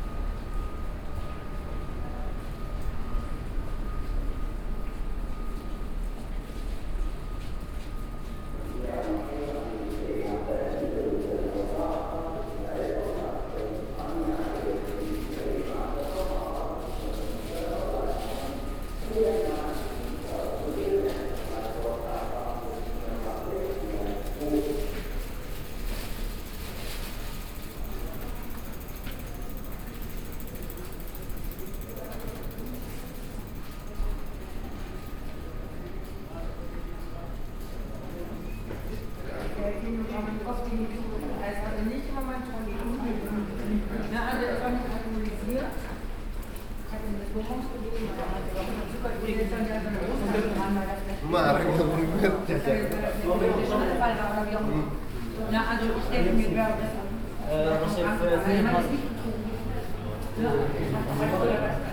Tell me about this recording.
walking from outside West entrance across the station, lingering a bit in the empty hall, walking out front entrance…